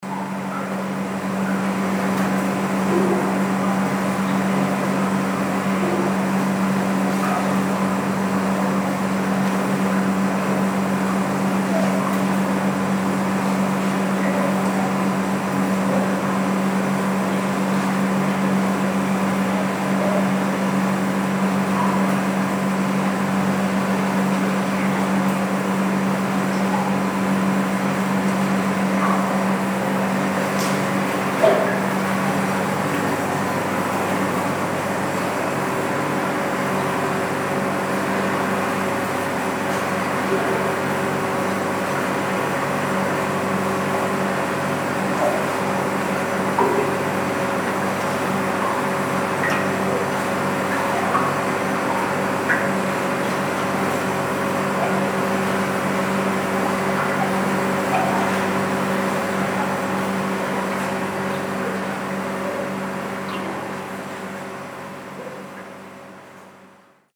tondatei.de: hamburger hafen rickmer rickmers - maschinenraumatmo
schiff, maschinenraum, motor, tropfen
March 2010